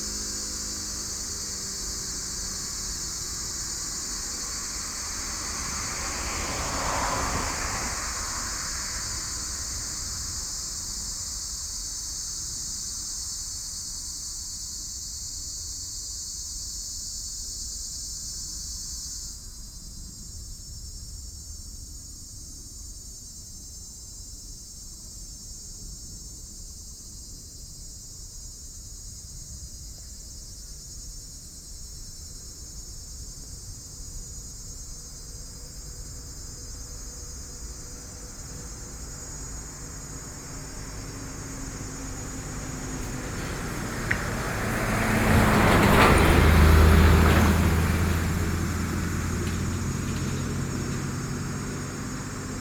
頂福巖, Linkou Dist., New Taipei City - Inside the temple
Inside the temple, Bird calls
Sony PCM D50+soundmam okm
Linkou District, New Taipei City, Taiwan